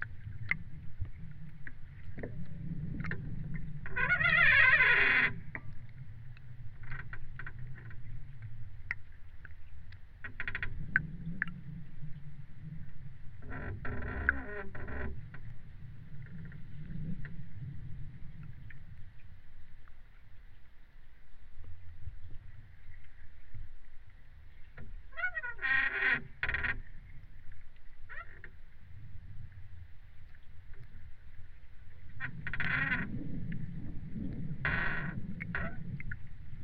Moletai, Lithuania, hydrophone
hydrophone just right under the squeaking pontoon
31 March 2019, ~16:00